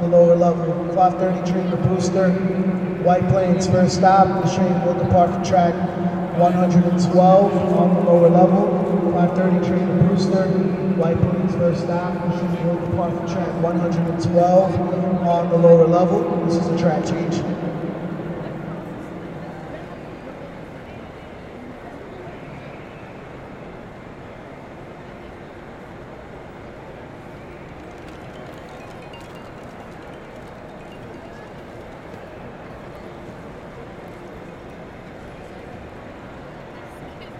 Park Ave, New York, NY, USA - Train announcements at Grand Central
Train announcements at Grand Central during rush hour.
United States